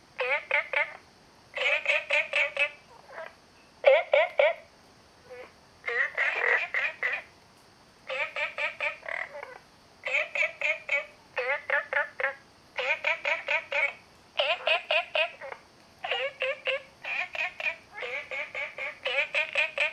綠屋民宿, 桃米里 Taiwan - Small ecological pool
Frogs sound, Small ecological pool
Zoom H2n MS+ XY